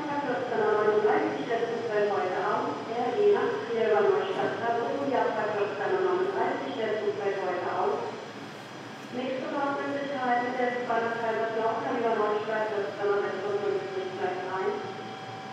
Mannheim Hbf, Willy-Brandt-Platz, Mannheim, Deutschland - platform announcementsstorm sabine train canceld
after the storm sabine the rail traffic in germany collapsed for some hours, here a recording of the main station mannheim with corresponding announcements.
zoom h6